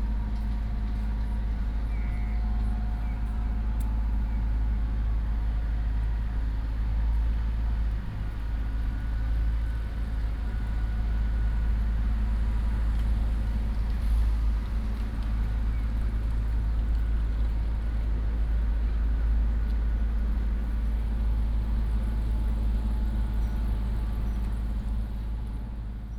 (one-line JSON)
{"title": "國立台灣大學圖書館, National Taiwan University - Traffic Sound", "date": "2016-03-04 17:42:00", "description": "in the university, Chirp, Traffic Sound, Bicycle sound", "latitude": "25.02", "longitude": "121.54", "altitude": "20", "timezone": "Asia/Taipei"}